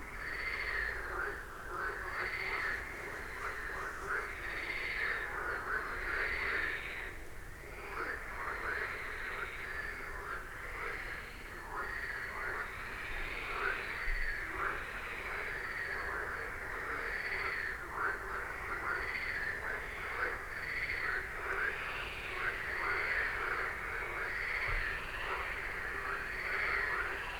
Niedertiefenbach, Runkeler Str., midnight, I've never heard frogs before at this place, within the village. Curious since when they're here, have to ask.
(Sony PCM D50, DPA4060)
Beselich, Germany, 15 May 2018, 00:25